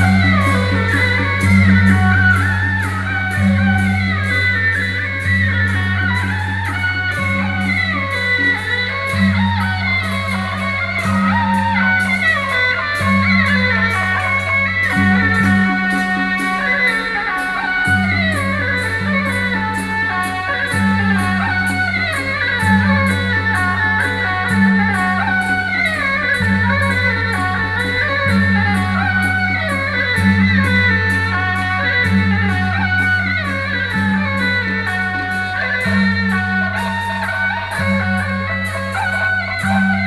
Jinghou St., Wenshan Dist., Taipei City - SoundMap20121128-3
Taipei City, Wenshan District, 集應廟停車場, 28 November 2012